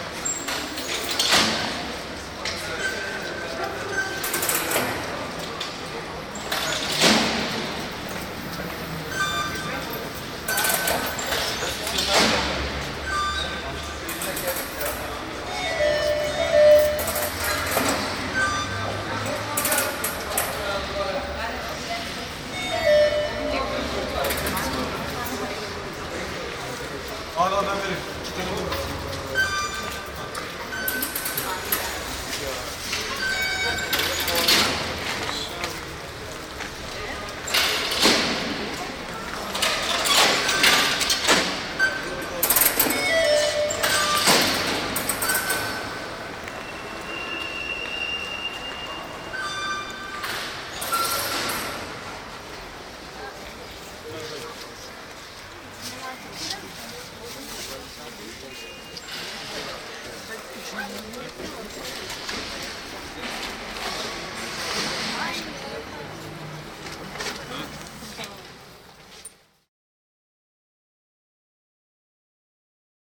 tunnelbahn taksim-kabatas istanbul - Istanbul, railway taksim - kabatas
Entrance hall of innercity cog railway. The often repeated 3 tone melody is the signal of the automatic gate. Recorded may 2003. - project: "hasenbrot - a private sound diary"